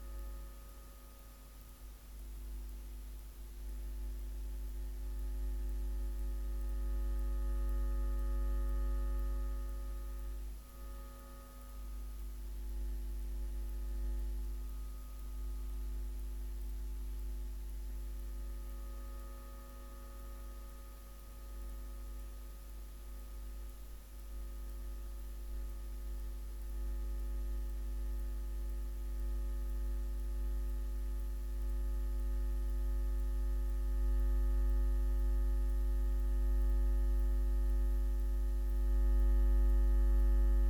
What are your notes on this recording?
I wanted to hear the electricity that makes all this possible - that makes recording sounds and uploading them to aporee and sharing them online etc. etc. into a feasible thing. I took an electric pickup coil and walked underneath the nearest accessible pylon to my home. You can hear in the recording that I am walking under and around the cables of the pylon; the loudest sounds are when I am standing directly beneath the wires. It's amazing to think of how this sound imbricates all our gadgets and the landscape.